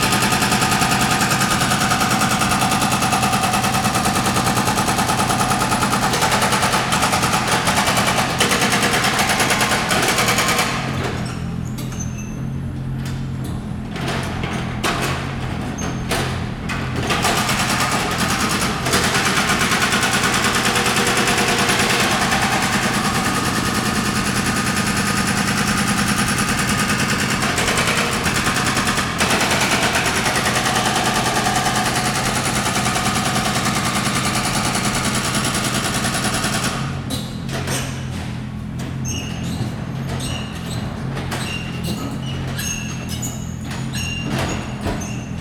Sec., Roosevelt Rd., 大安區 - Construction noise
Construction noise
Zoom H4n
16 June 2011, ~17:00